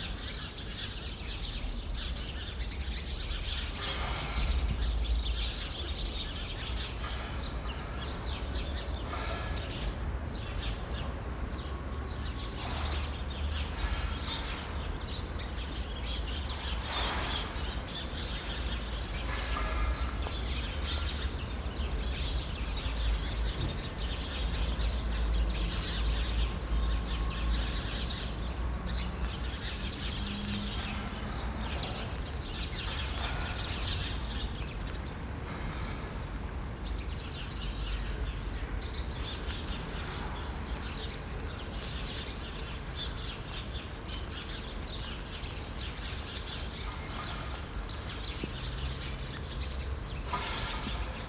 There is a small sand pit for children in this secluded spot with one wooden bench to sit on. If one does you are facing an apartment block that is being renovated. It is covered with white sheets that flap silently when there's a breeze. Occasionally distance sounds of dropping material can be heard. The rain increases but the chattering sparrows pay it no attention.